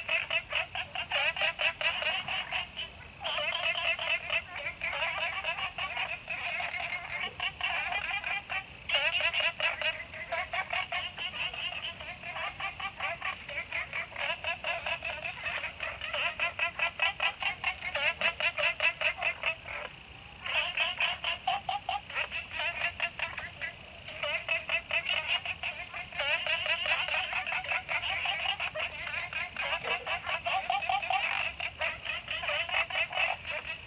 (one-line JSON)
{"title": "青蛙ㄚ 婆的家, Taomi Ln., Puli Township - Frogs chirping", "date": "2015-09-03 20:31:00", "description": "In the bush, Frogs chirping, Small ecological pool\nZoom H2n MS+XY", "latitude": "23.94", "longitude": "120.94", "altitude": "463", "timezone": "Asia/Taipei"}